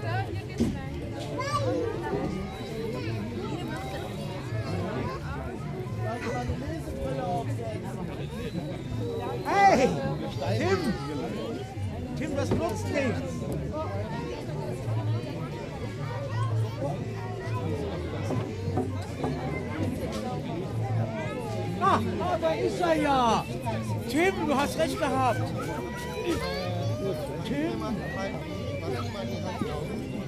berlin wall of sound - lohmuhle sommerfest 2. f.bogdanowitz 29/08/09
Berlin, Germany